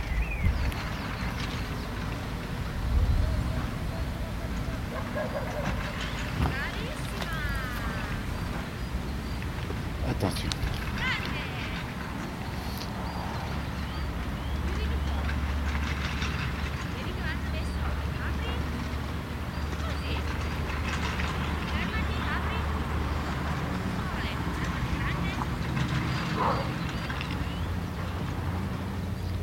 Bardonnèche Turin, Italie - campo SMITH
Bardonecchia, little ski place in the old way. There is a delicate balance between the sounds of skiers, the voices & the dog reverberating on the next forest, the mecanics of chairlifts, wind, steps on the melting springtime snow &, by the end, the music coming out from the cabin & creating a funny decontextualisation...
Bardonecchia Turin, Italy, 7 April 2004